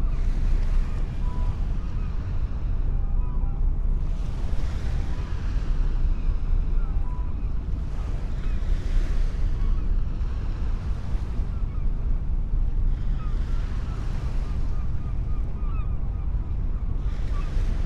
Helipad, Dunkirk harbour, P&O European Seaway leaving the Charles de Gaulle lock to the left, surf, seagulls and the crane at the floating dock - MOTU traveler Mk3, Rode NT-2A.